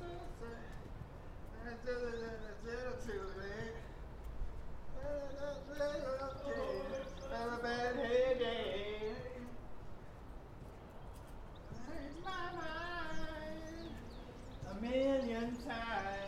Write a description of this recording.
Recorded during Covid19 lockdown in the UK, a man would sing outside his everyday this song, around a similar time. Recorded using sony PCMD100